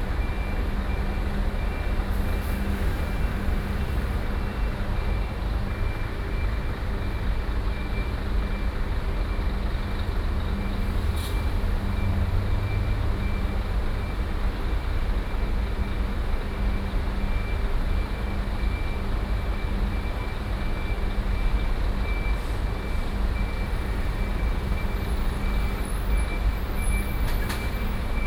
Keelung, Taiwan - Traffic noise
Busy Traffic, Sony PCM D50 + Soundman OKM II